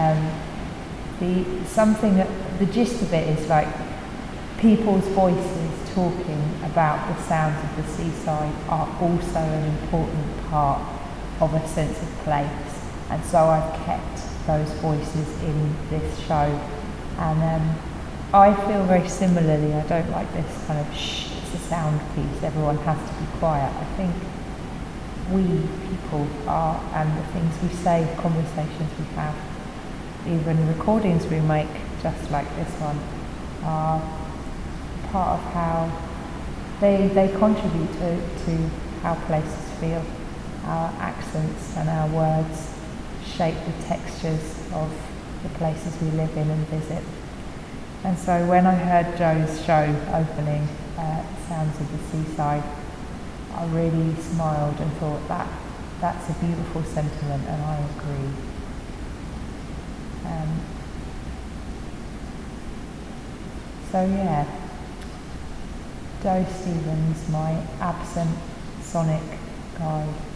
rainy day at Drill Hall, Portland, Dorset - remembering Joe Stevens
I had a lovely conversation with Hannah Sofaer who remembers Joe from his creative conversations radio broadcasts; we spoke about Joe and about my interest in retracing his steps. She thought I should make a recording explaining some of this and so I did try. I probably should have had the mic a bit closer to me, but the hall is so amazing and I wanted to capture more of the resonance of the space, the traffic outside. I hope you can still hear me trying to explain myself.